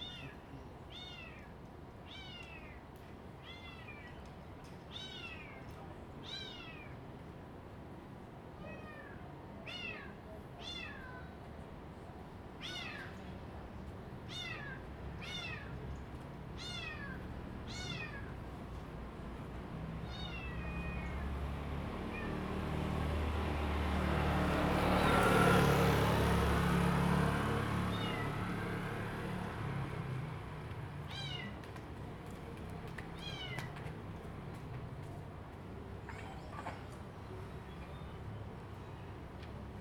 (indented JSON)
{
  "title": "Jivalino, Koto island - Small tribes",
  "date": "2014-10-29 14:32:00",
  "description": "Small tribes\nZoom H2n MS +XY",
  "latitude": "22.04",
  "longitude": "121.57",
  "altitude": "31",
  "timezone": "Asia/Taipei"
}